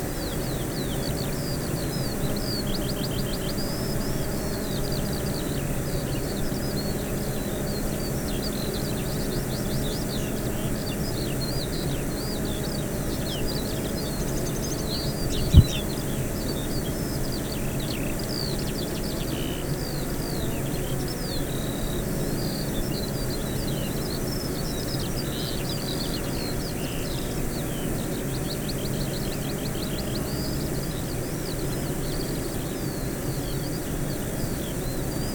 bee hives ... eight bee hives in pairs ... dpa 4060 to Zoom F6 ... mics clipped to bag ... bird song ... calls skylark ... corn bunting ...
25 June 2020, 05:45